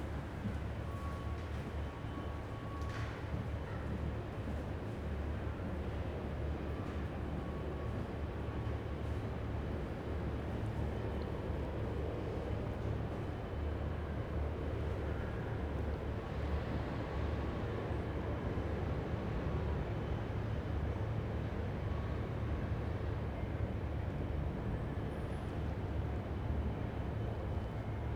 Atmosphere mid tunnel under Southwark Bridge, Queen Street Place, London, UK - Midtunnel under Southwark Bridge, atmosphere, 2 joggers
Utterly constant sonic atmosphere of the foot tunnel under Southwark Bridge. There are some nice historic pictures of the bridge and the area in tiles on the walls. The distant bleeps are from the City of London waste site nearby. Two lunchtime joggers and a woman with a dog pass during the recording.